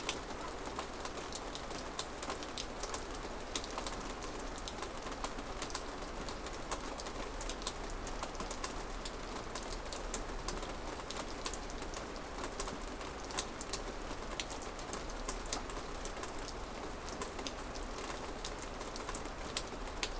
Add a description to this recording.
Rain recorded on porch, house in old growth forest.